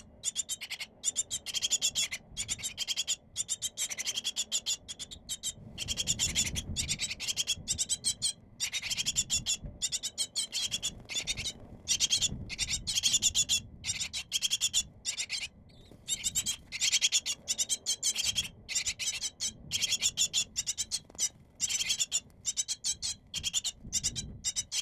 Athens, on Strefi hill, young tits' nest in a lamp post, after a few seconds, a parent bird flew in, touching the microphone.
(Sony PCM D50, Primo EM172)
Athina, Greece, 9 April 2016